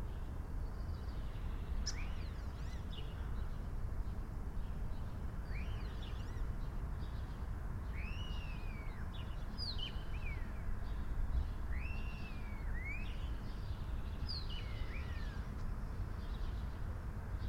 Antwerpsesteenweg, Brussel, België - President Garden i
Early Morning Recording / Birds on a Rooftop - Garden President Brussels Hotel
Brussels North
Urban Sonic Environment Pandemic
24 March 2020, 06:43